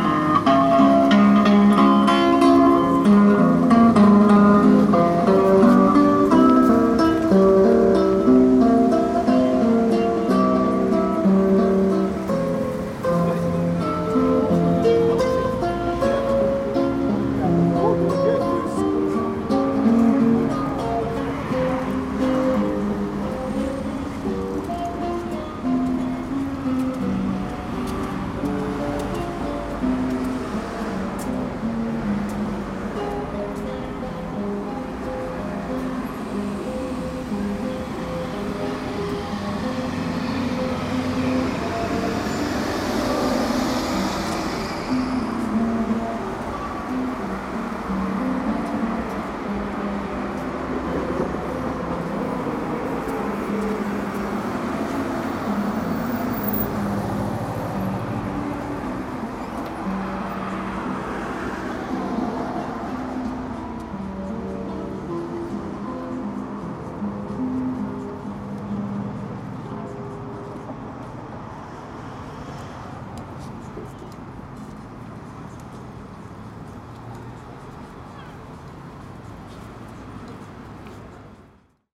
Street musician near Pioneer mall. Music (guitar through cheap amplifier), crowd, traffic, streetcars.
Алтайский край, Сибирский федеральный округ, Россия, 29 August